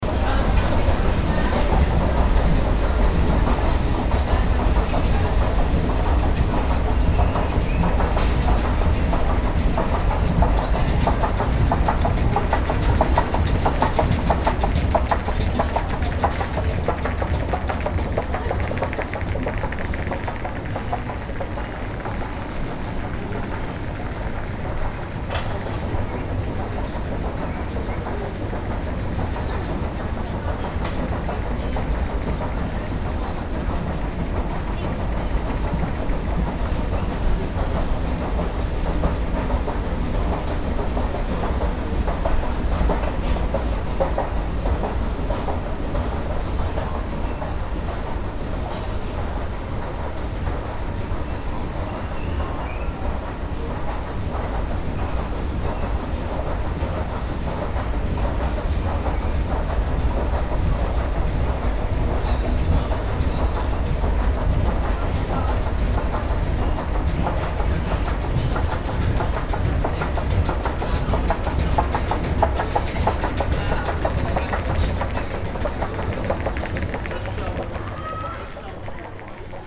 {"title": "paris, les halles, passage rambuteau, moving stairs", "date": "2009-10-14 11:31:00", "description": "moving stairs leading into a shopping passage\ninternational cityscapes - social ambiences and topographic field recordings", "latitude": "48.86", "longitude": "2.35", "altitude": "39", "timezone": "Europe/Berlin"}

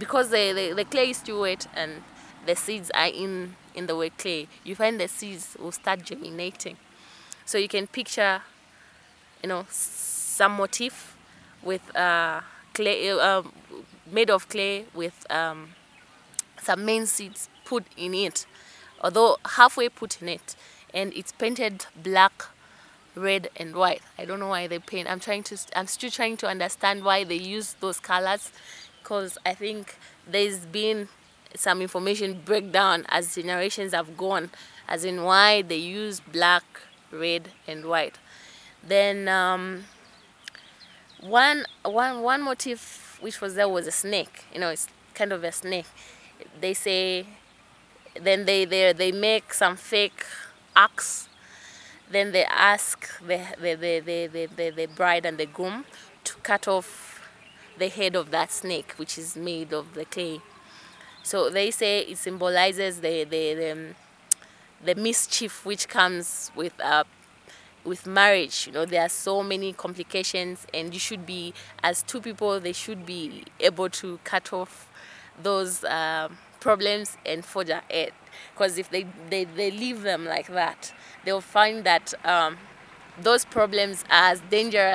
{"title": "The Garden Club, Lusaka, Zambia - Traditional teachings in multimedia...", "date": "2012-07-20 17:07:00", "description": "Mulenga Mulenga takes us on an audio journey through her artistic research practice across Zambian cultural heritage, and especially the traditional teachings of the Bemba, which are passed down through generations in elaborate “multimedia” forms and events. Here, she describes some of the symbols, sculptures and ritual teachings of the Mbusa ceremony….", "latitude": "-15.40", "longitude": "28.31", "altitude": "1262", "timezone": "Africa/Lusaka"}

